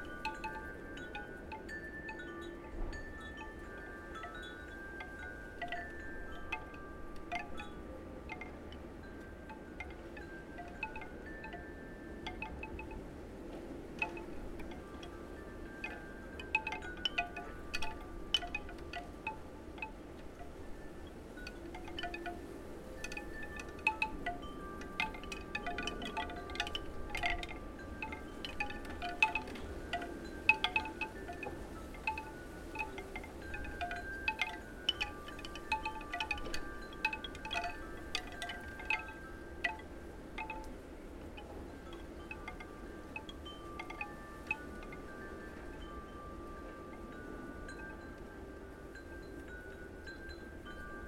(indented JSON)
{
  "title": "Rue Jean Jaurès, Saint-Nazaire, France - Chimes in a small garden",
  "date": "2021-02-20 13:10:00",
  "description": "The wind and the chimes in a small garden in the city. ZoomH4 recording",
  "latitude": "47.28",
  "longitude": "-2.21",
  "altitude": "12",
  "timezone": "Europe/Paris"
}